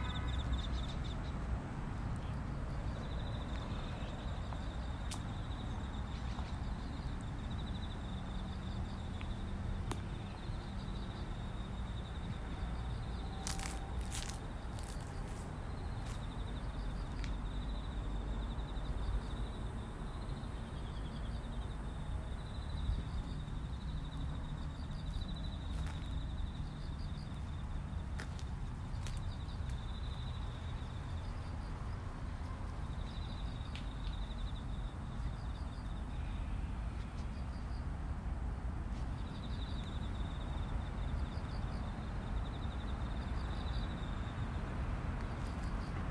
中国北京市海淀区树村路 - cicadas and birds
sounds recorded from the park near my home in Beijing, China.